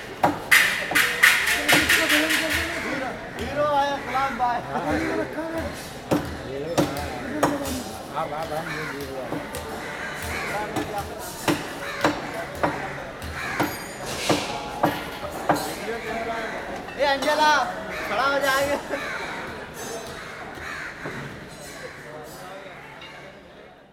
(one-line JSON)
{"title": "Shop, M.J.Phule Market, Lokmanya Tilak Rd, Dhobi Talao, Chhatrapati Shivaji Terminus Area, Fort, Mumbai, Maharashtra, Inde - Crawford Meat Market Bombay", "date": "2003-11-15 10:00:00", "description": "Crawford Meat Market Bombay\nAmbiance intérieur - marché aux viandes", "latitude": "18.95", "longitude": "72.83", "altitude": "9", "timezone": "Asia/Kolkata"}